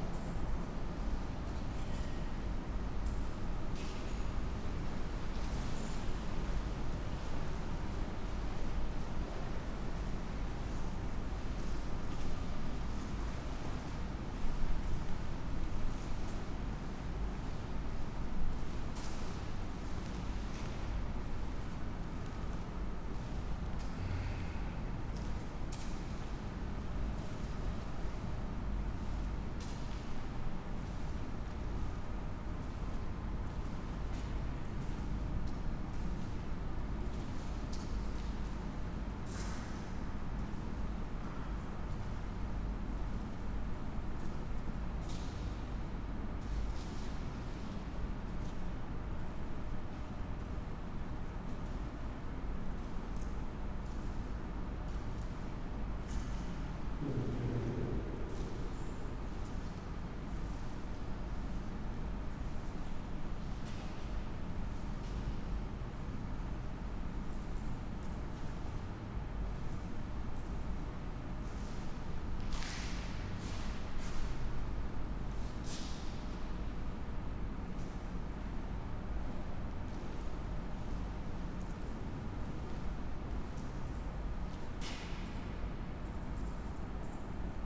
5.18 기념공원 Grotto (interior)
A man-made grotto in a half circle shape (having a long curved wall)...this room is a memorial to those killed during the May uprising against military rule in 1980...all surfaces are hard, stone or bronze...this recording spans the time 2 separate groups visited the grotto and the quiet/empty periods surrounding those...
대한민국